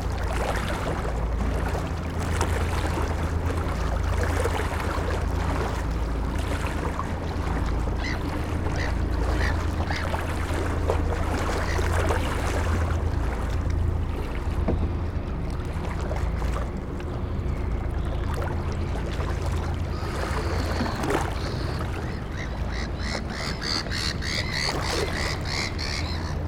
Riverbank, Basel, Switzerland - (484) Waves, birds, engines and bells
Recording from the stairs of a riverbank atmosphere with ships' engines, waves splashing and bells at the end.
ORTF recording made with Sony PCM D-100.